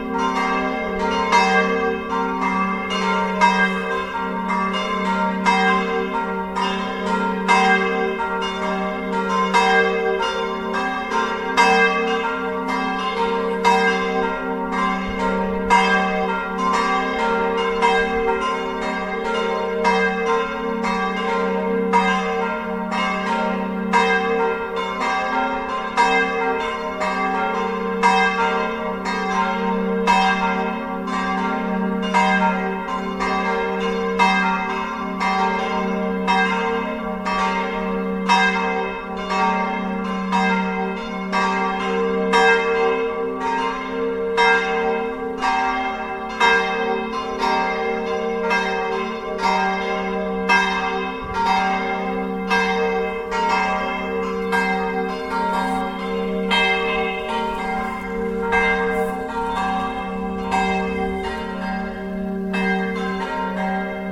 … the bells are ringing for an evening prayer in lent… I listen into the passing resonances… it takes more than three minutes for the bells to sound out… the changing sounds and melodies are amazing… then I go into the old prayer room, one of the oldest in the city…
…die Glocken rufen zu einem Abendgebet in der Fastenzeit… ich höre zu bis sie ganz verklungen sind… mehr als drei Minuten dauert es für die Glocken, zum Stillstand zu kommen… das Ausklingen erzeugt ganz erstaunliche Klänge und Melodien… dann geh ich in den alten Betraum, einen der ältesten der Stadt…